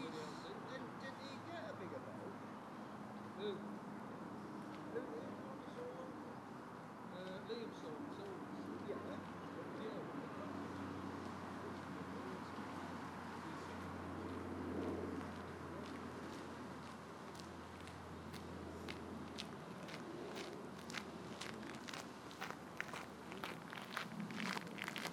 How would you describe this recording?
Canal, boats, pedestrians, bicycles.